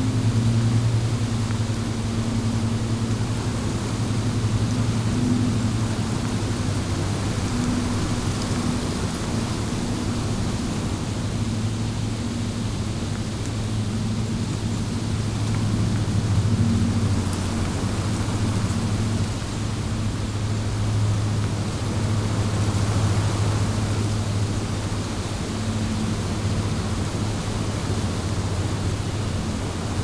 Quemigny-sur-Seine, France - Wind in the trees
On the completely bare fields of the Burgundy area, wind rushes in a copse. Trees fold into the wind.
30 July 2017, 14:00